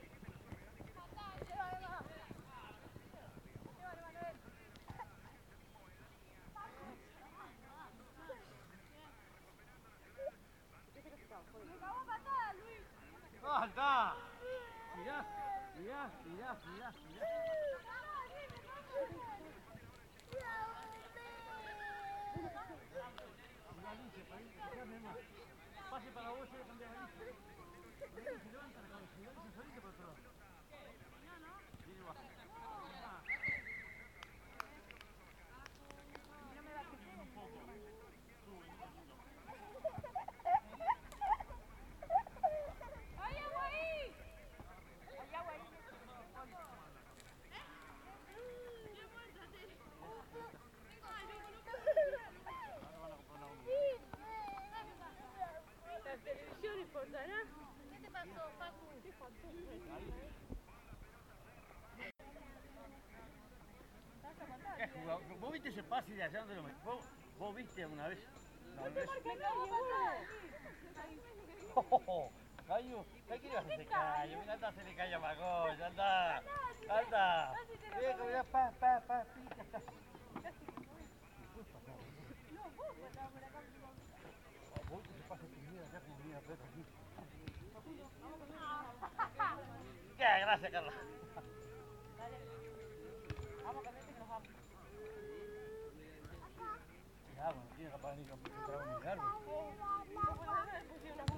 Pernambuco, Montevideo, Departamento de Montevideo, Uruguay - Montevideo - Uruguay - Stade du Cerro
Montevideo - Uruguay
Stade du quartier du Cerro
Entrainement de football - Ambiance
October 2000